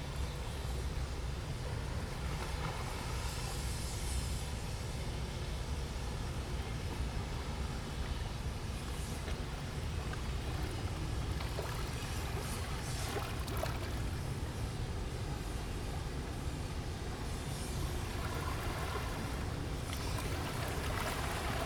Sound of the waves, Shipbuilding Factory Sound
Zoom H2n MS+XY +Sptial Audio